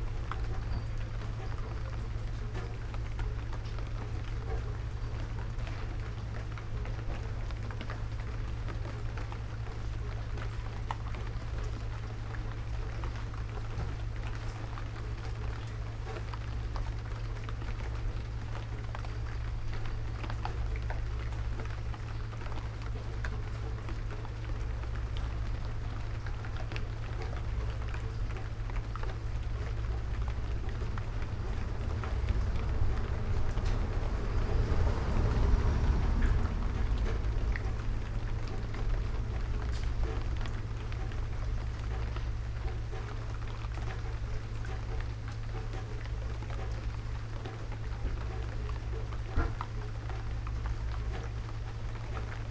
kyoto - scent of rain and honeyed old wood
Kyoto, Kyoto Prefecture, Japan, 31 October 2014, 20:21